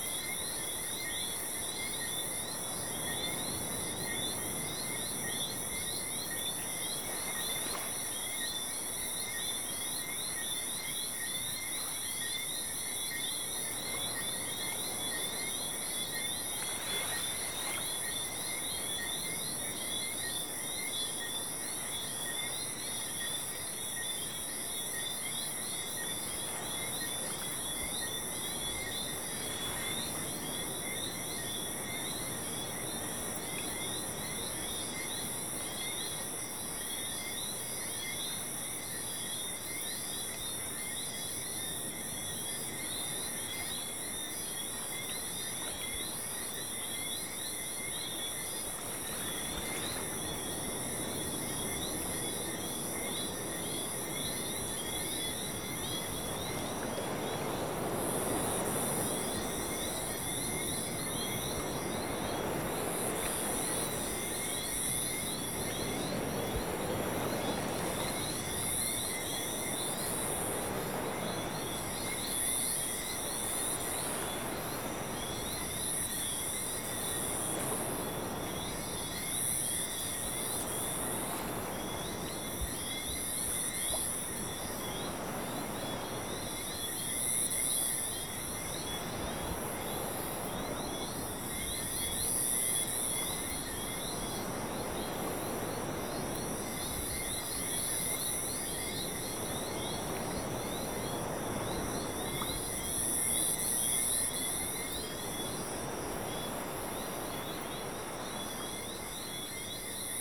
Anse des Rochers, Saint-François, Guadeloupe - Beach, waves, insects & Frogs at night by J-Y Leloup
At night, very close to the sea and its waves, a small wood, with insects & frogs
Guadeloupe, France, 3 December 2020